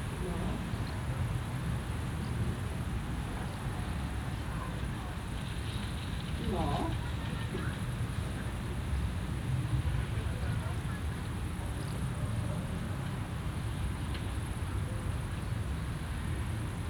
Poznan, balcony - sweeping shower
binaural recording. standing on a balcony during heavy shower. rain fading in and out, really quickly form wall of water to sparse drops. neighbor talking on the phone. distant shouts, kids playing outside despite unpleasant weather.